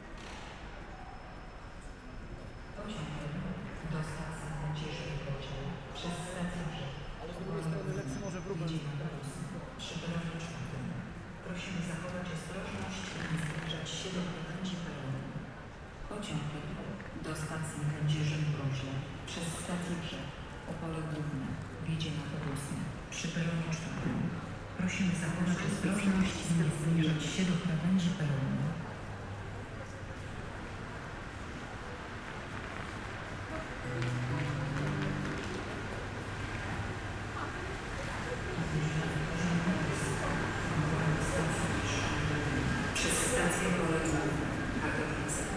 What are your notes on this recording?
Wrocław, Breslau, strolling around in Wrocław Główny main station, (Sony PCM D50, DPA4060)